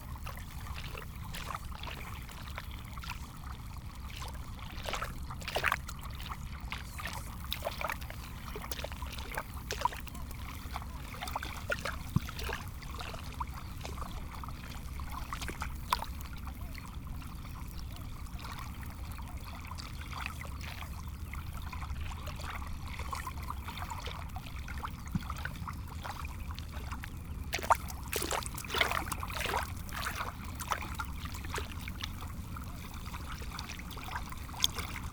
LAiguillon-sur-Mer, France - The sea
Recording of the sea at the end of the Pointe d'Arçay, a sandy jetty.
24 May, 08:30